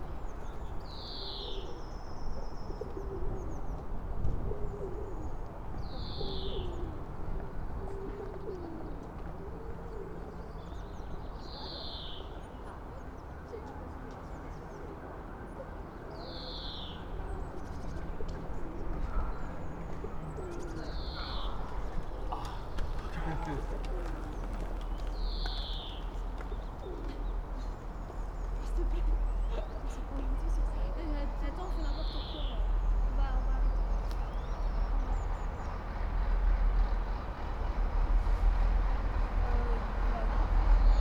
on the bridge, Rewley Rd., early spring morning ambience
(Sony PCM D50)
Oxfordshire, UK, March 2014